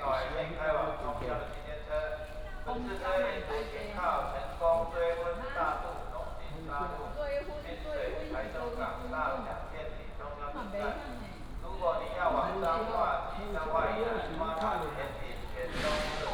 walking in the Station, From the station hall to the platform
Wuri District, Taichung City, Taiwan